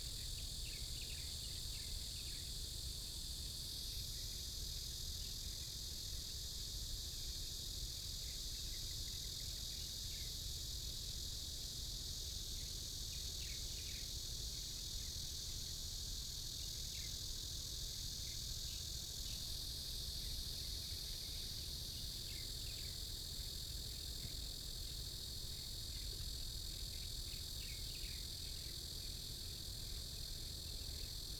Cicadas sound, Traffic Sound, Birdsong sound, Windbreaks
Sony PCM D50+ Soundman OKM II